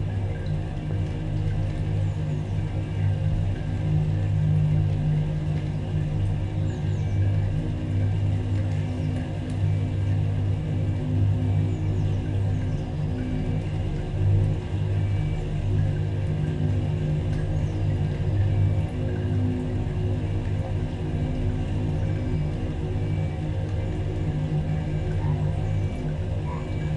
Peterborough, UK - Rain through drone of metal pillar
Recorded on a Mix Pre-3 and pair of stereo DPA 4060s. L and R mics inserted into holes in metal pillar.
England, United Kingdom, 28 February 2020